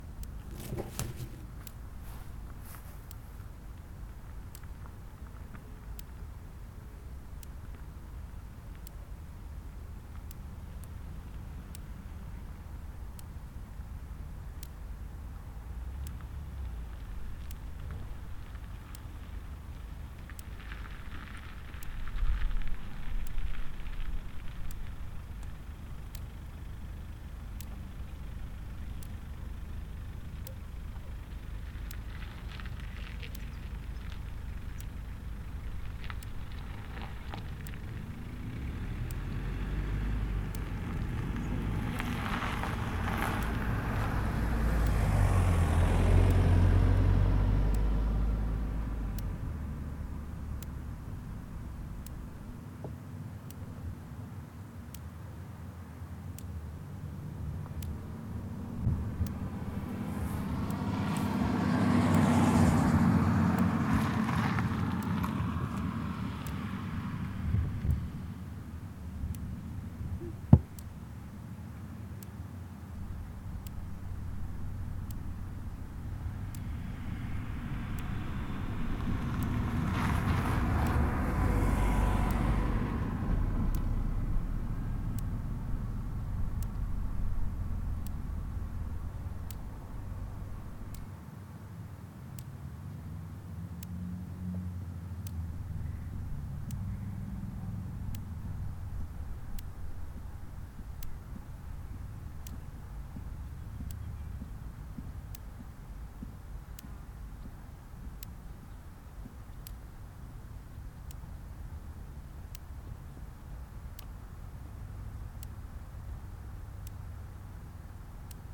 Près de l'enclos des chevaux qui sont bien silencieux, le rythme des impulsions électriques.